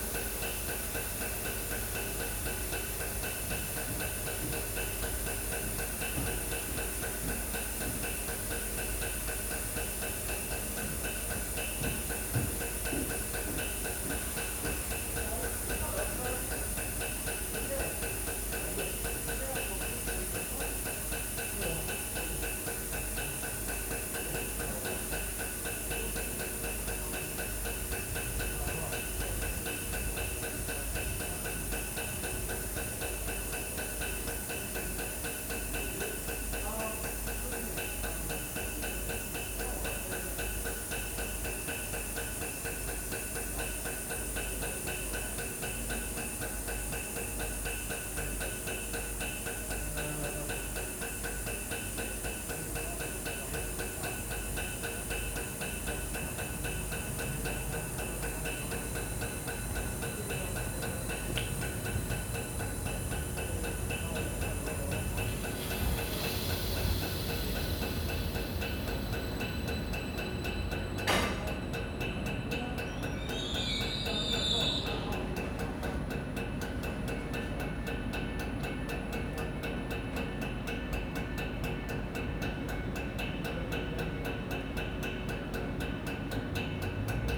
Waiting for my connection on a dark, cold, wet almost deserted station with a covid face mask that had already been on far too long. Beside me this train ticked away, skipping a beat every now and again, quite oblivious to the crazy world it existed in.
2 recordings joined together.